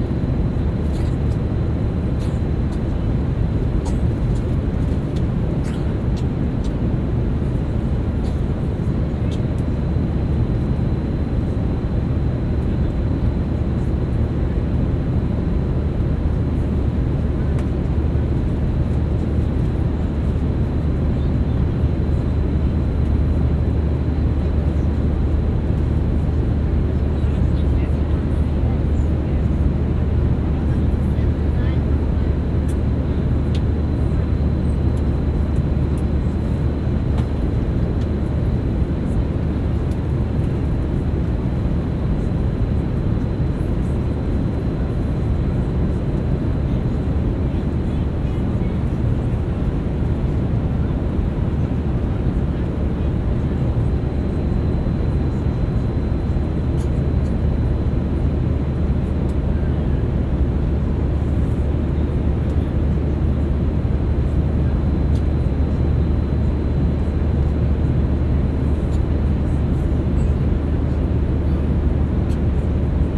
in the Ryanair plane
In the Ryanair Boeing plane traveling from Billund to Vilnius...Sennheiser Ambeo smart headset